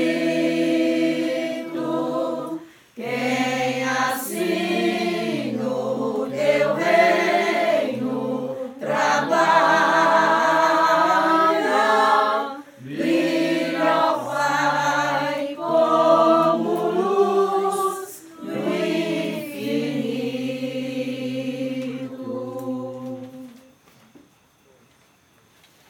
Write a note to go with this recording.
Mass for Sao Sebastian, outside during the night, in the countryside of Minas Gerais (Brasil). A group of 30 people (approx.) praying and singing for the celebration of Sao Sebastian during the night of 18th of January 2019 in the Tangara Community in Minas Gerais (Brasil). Recorded by an Ambeo Smart Headset by Sennheiser, GPS: -20.1160861, -43.7318028